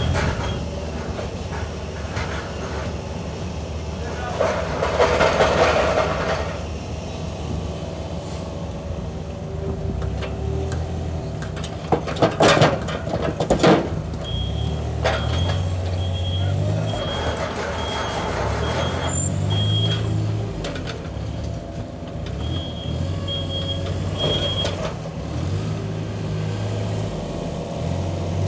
Jens Vejmand laying cobblestones. 7400 Herning, Denmark - Jens Vejmand
Recorded in the UK as our back street is getting new cobblestones. But reminded me of a Danish folk song about a paviour called Jens Vejmand who is buried here.